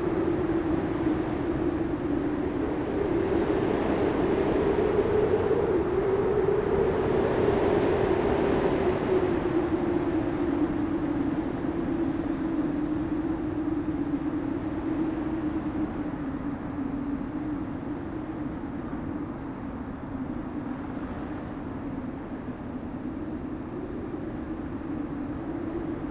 Nant, France - Strong wind
A very strong wind in the electric lines, this makes the strange music of the wind.